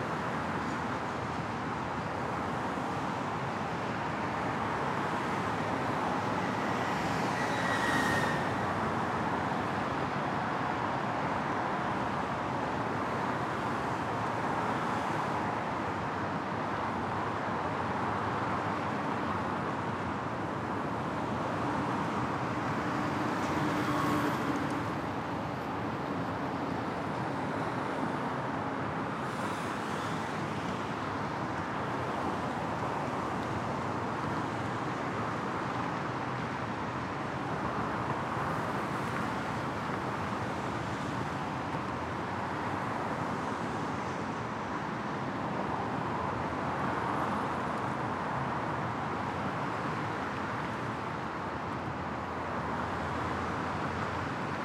Cammeray NSW, Australia - Falcon Street Pedestrian and Cylcist Bridge
Recorded on this bridge at peak hour on a weekday morning - cars coming to and from the harbour bridge and the city - DPA 4060s, H4n